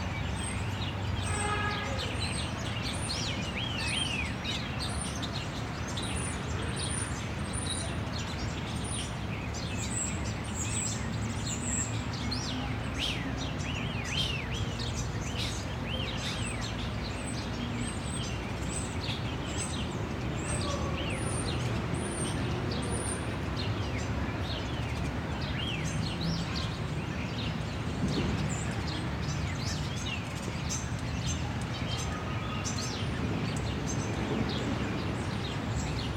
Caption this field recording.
Sounds of various birds and the alarm call of a grey squirrel (after 6:14). The Grey squirrel was possibly reacting to the presence of a nearby dog.